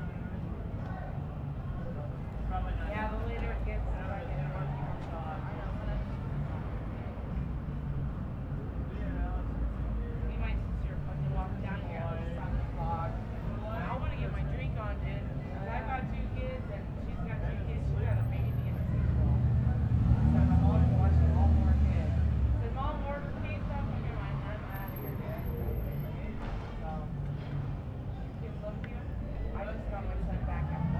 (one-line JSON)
{"title": "neoscenes: gals smoking outside Sundances", "date": "2011-07-01 22:27:00", "latitude": "34.54", "longitude": "-112.47", "altitude": "1628", "timezone": "America/Phoenix"}